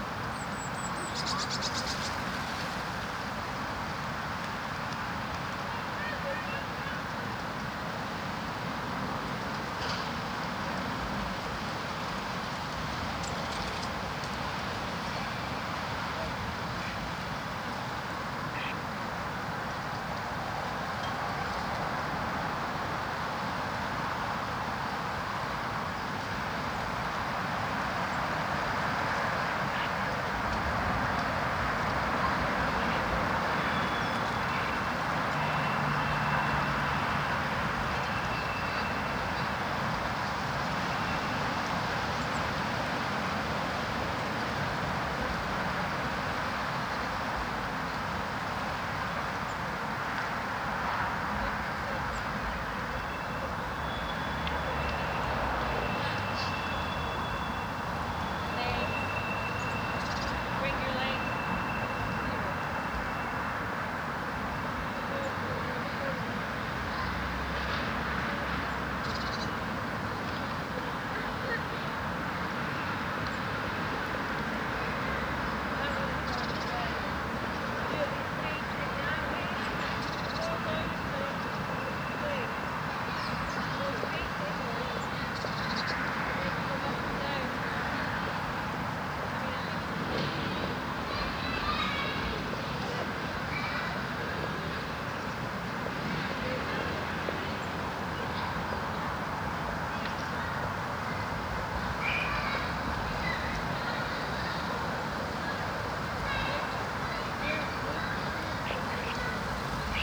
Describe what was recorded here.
Windy-ish day, recorded with shotgun microphone. Lots of traffic noise as it is close to a mainroad, bird song, some people using exercise equipment..